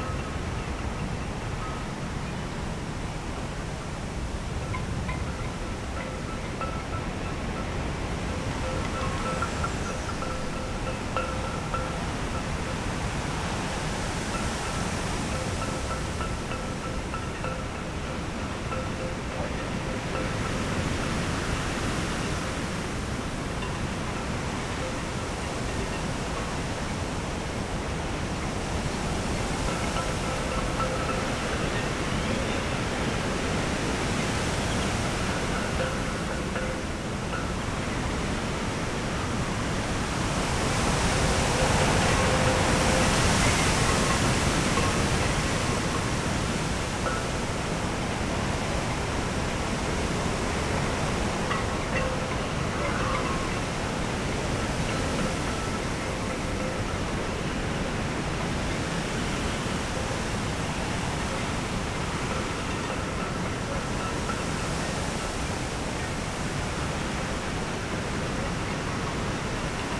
woudsend: parking - the city, the country & me: wind blown birch trees
stormy day (force 7-8), birch trees swaying in the wind
the city, the country & me: june 13, 2013
Indijk, The Netherlands, 13 June 2013, ~14:00